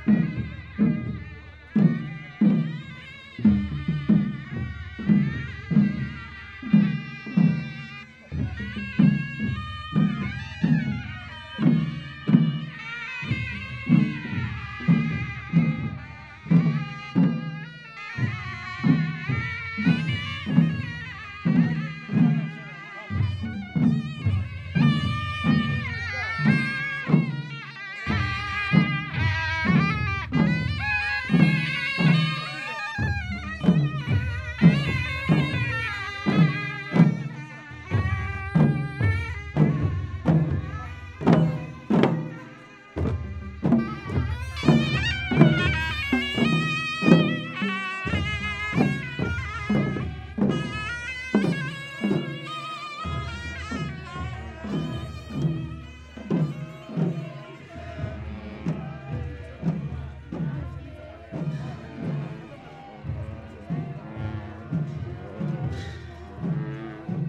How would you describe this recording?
Leh - Ladak - Inde, Procession sur les hauteurs de la ville, Fostex FR2 + AudioTechnica AT825